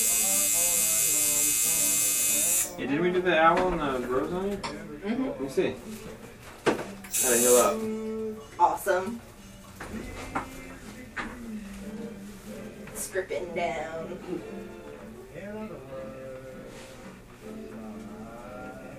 Raw Power Tattoo - Under the Needle
15 October, 21:15